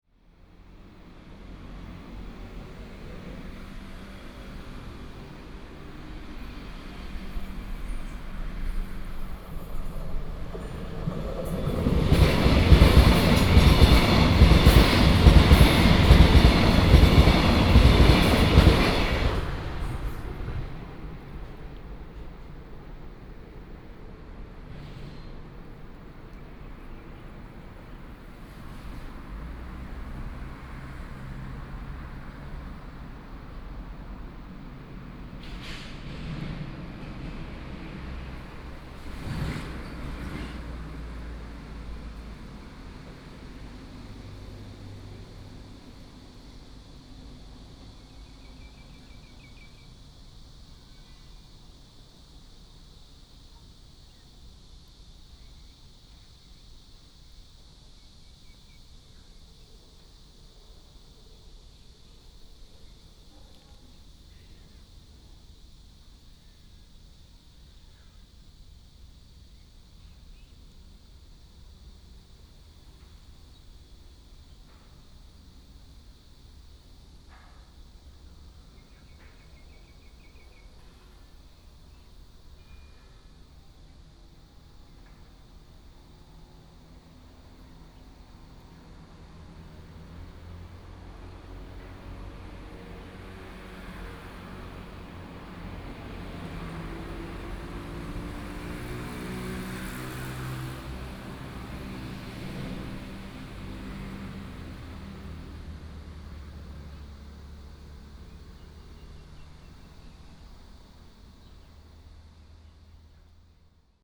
Fugang, Taoyuan County - train runs through
Cicada and bird sound, train runs through, Traffic sound